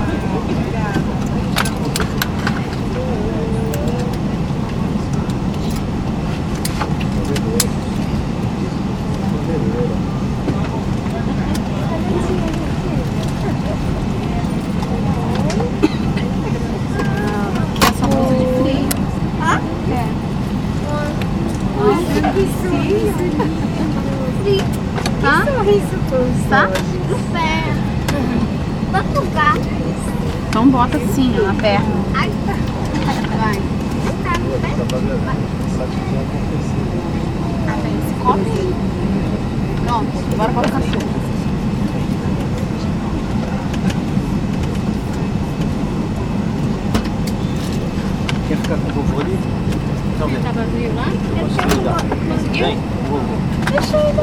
Galeão, Rio de Janeiro, Brazil - No avião, indo para Fortaleza
Dentro do avião no aeroporto Galeão no Rio de Janeiro, aguardando o vôo para Fortaleza.
19 June 2012